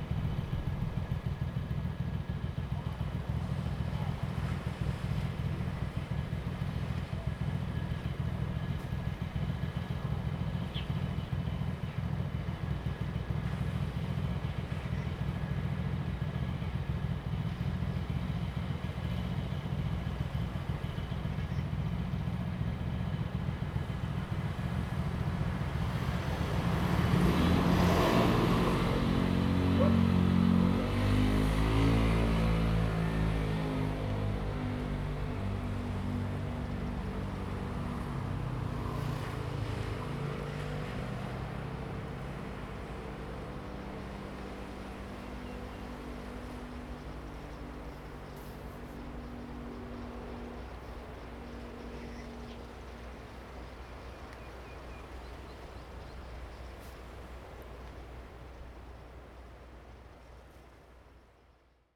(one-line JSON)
{"title": "Chuanfan Rd., Hengchun Township 恆春鎮 - On the coast", "date": "2018-04-23 06:38:00", "description": "On the coast, Sound of the waves, Birds sound, traffic sound, Dog barking\nZoom H2n MS+XY", "latitude": "21.93", "longitude": "120.82", "altitude": "5", "timezone": "Asia/Taipei"}